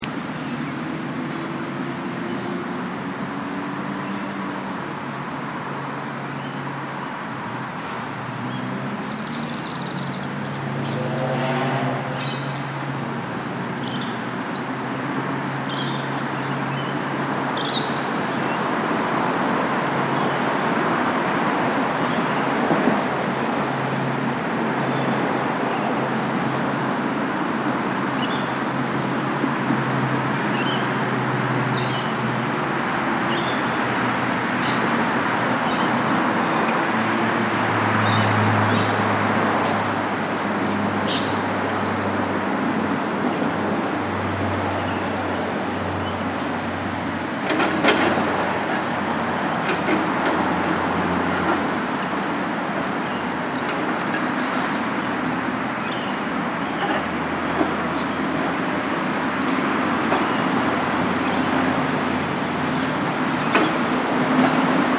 Lindfield NSW, Australia - Birds and traffic
On the edge of Lane Cove National Park. Birds chirping, traffic noise, nearby building work. A popular spot to sit and have lunch.